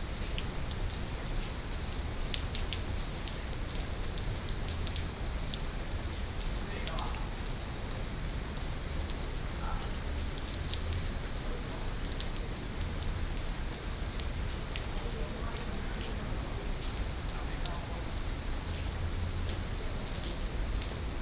松菸一號倉庫, Taipei City - Rain and Cicada sounds
Rainy Day, Thunder, Cicada sounds